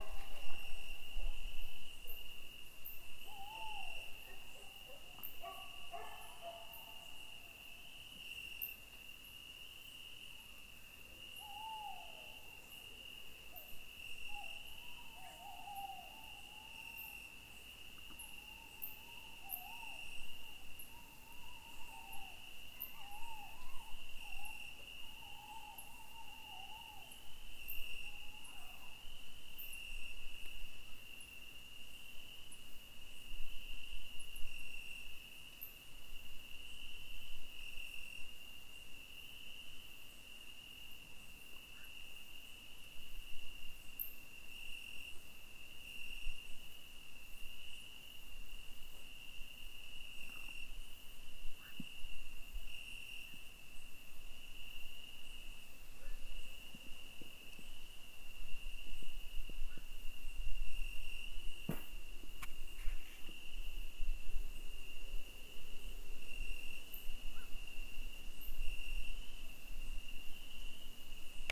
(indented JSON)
{
  "title": "Via Campagna Sud, Sassetta LI, Italia - Midnight @ Pian delle more",
  "date": "2018-09-21 23:45:00",
  "description": "B&B after dinner, crickets, birds, dogs.\nRecorded with a TASCAM DR-05 (with some noise because handheld - sorry)",
  "latitude": "43.13",
  "longitude": "10.65",
  "altitude": "179",
  "timezone": "Europe/Rome"
}